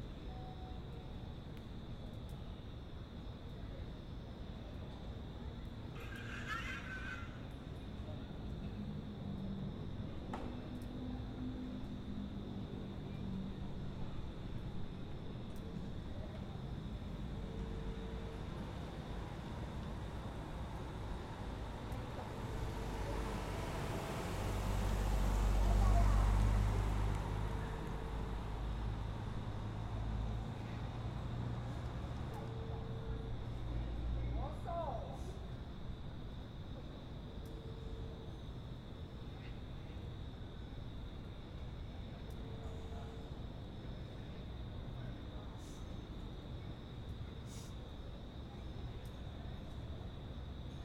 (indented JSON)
{
  "title": "Cl., Medellín, El Poblado, Medellín, Antioquia, Colombia - Apartamentos Acquavella",
  "date": "2022-09-12 18:35:00",
  "description": "Se aprecia el flujo de vehículos y personas al frente del Edificio Acquavella",
  "latitude": "6.22",
  "longitude": "-75.57",
  "altitude": "1552",
  "timezone": "America/Bogota"
}